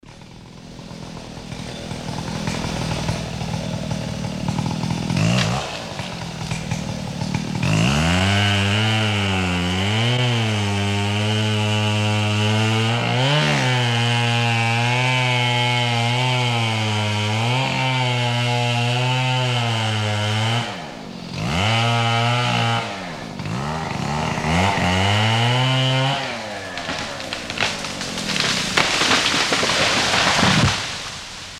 heiligenhaus, müllerbaum, motorsäge
baum zerteilung im frühjahr 07, mittags
project: :resonanzen - neanderland - soundmap nrw: social ambiences/ listen to the people - in & outdoor nearfield recordings, listen to the people
2 July 2008